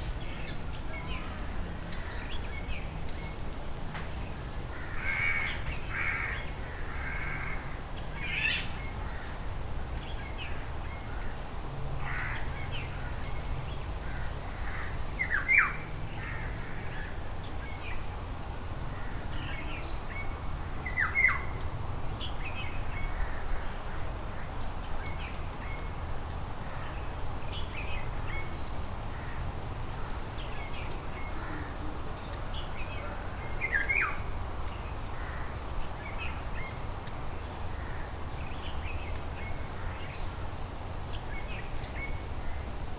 Seahorse Road, Kenia - Last day ambient
Early morning ambience at Sunset Villa porch in Seahorse Village, Kilifi, Kenya. Recorded with Zoom H5.